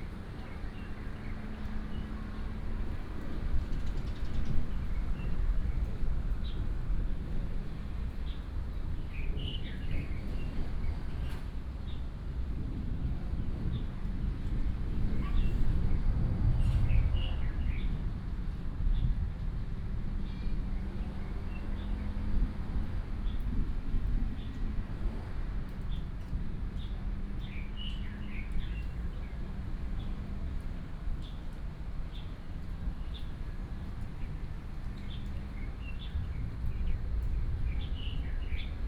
桃園八德埤塘生態公園, Bade Dist. - Birds and thunder sound
walking In the park, Dog sounds, Birds sound, Traffic sound, thunder sound, Tourists